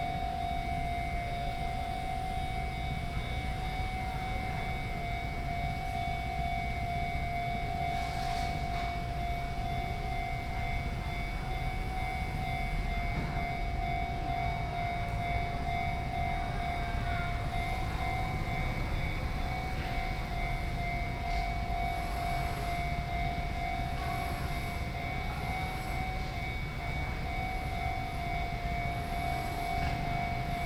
Chenggong 1st Rd., Ren’ai Dist., Keelung City - In front of railway crossings
Traffic Sound, In front of railway crossings
Ren’ai District, Keelung City, Taiwan